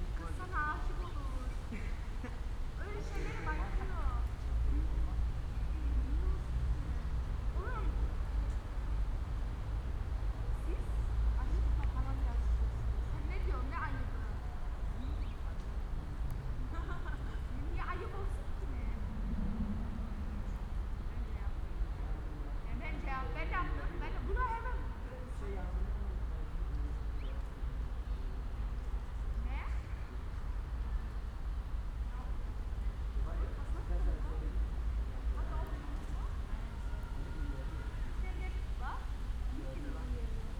Stallschreiberstraße, Berlin Kreuzberg - building block, inner yard ambience
Stallschreiberstraße, Berlin Kreuzberg, yard between houses, some youngsters hanging around at the playground, cold autumn Sunday afternoon, 2nd pandemic lockdown in town
(Sony PCM D50, DPA4060)